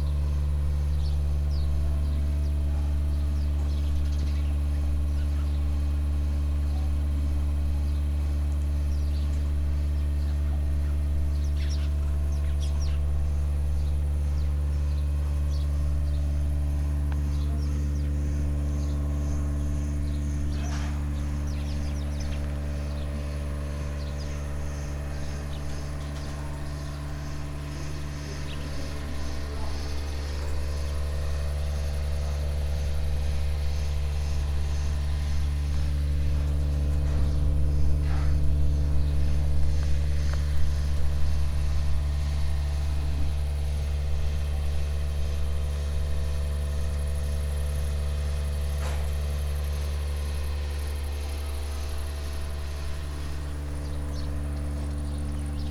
soulaniex: cow shed drone - KODAMA document
31 August, 16:43